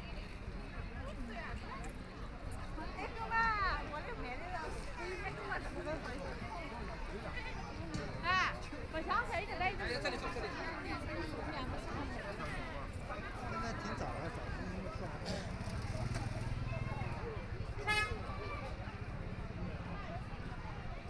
中華人民共和國上海虹口區 - play mahjong
Many elderly people gathered in an area to play mahjong, Binaural recording, Zoom H6+ Soundman OKM II